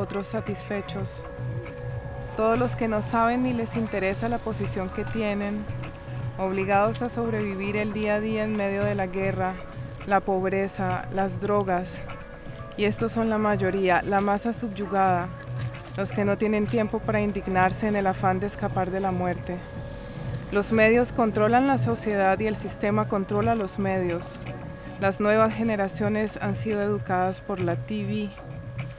River Drava, Maribor, Slovenia - polenta festival activity
during the polenta festival, various groups and projects perform at the so called beach near river Drava. here: improvised reading of texts against heroism.
(SD702 Audio technica BP4025)